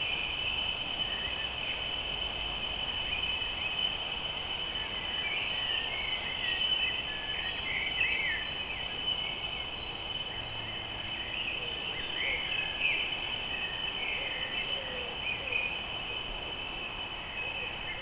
In den Fürstengärten, Paderborn, Deutschland - Paderinsel unter Wasser
A hidden place
An island in the river
the city is all around
still
the river is listening
to what is thrown into it
to people long ago
and far away
to the one
who came
to listen alongside
even
to you
Nordrhein-Westfalen, Deutschland, 10 July 2020